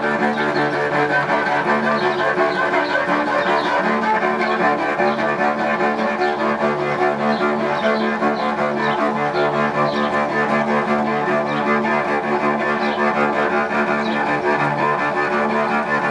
Konstanz, Germany - Awesome Cello Guy - Konstanz
June 2012 Street performer on water front.
Kreuzlingen, Switzerland, 17 June 2012